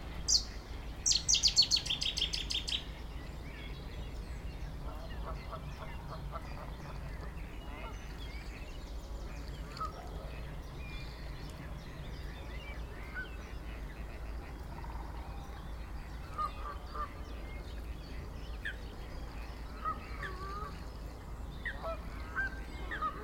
Huldenberg, Belgium - Grootbroek swamp
Grootbroek is a swamp and a pond, located in Sint-Agatha-Rode and Sint-Joris-Weert. I immediately thought that the place must be charming : all that life abounding in the swamps ! I was wrong. Indeed many birds were present on the pond, but another kind of bird was there : the plane. This place is absolutely drowned by the Zaventem takeoffs. I went there very early on the morning only for Grootbroek. I said to myself : do I leave immediately ? No, I must talk about this horror, the great nature and the carnage airport. Ornithologists have to know it, the main volatile here is the plane. Sounds on the pond : Canada geese, Mallard ducks, Kingfisher, Waterfowl, Common Moorhen, Eurasian Coot, Mute swans. On the woods : Common Chiffchaff, Common Chaffinch, Common Blackbird. There's an unknown bird, very near each time, probably a Meadow Pipit.